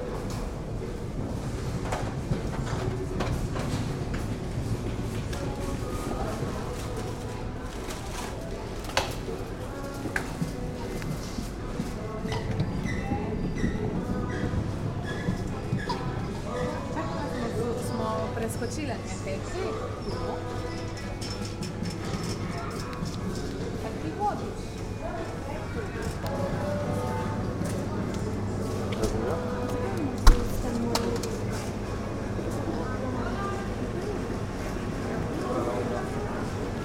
Nova Gorica, Slovenija, Kulandija - Za Nono...
Nova Gorica, Slovenia, 8 June